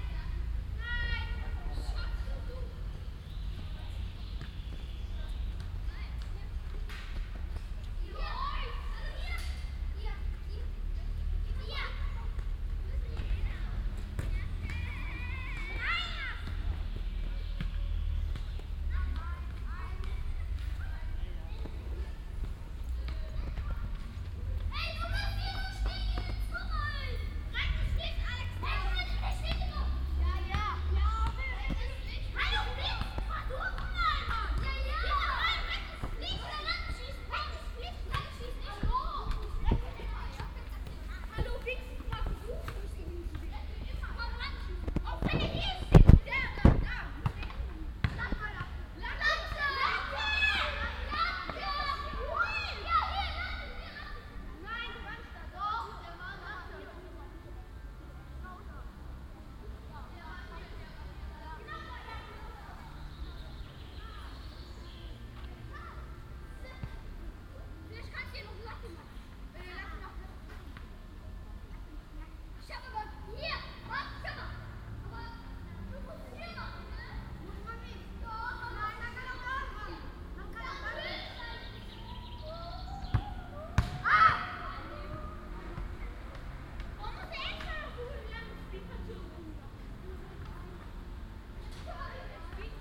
cologne, bruesseler platz, in front of church
fussball spielende kinder, windgeräusche in den bäumen, orgelmusik dringt durch das kirchenportal
soundmap nrw
- social ambiences/ listen to the people - in & outdoor nearfield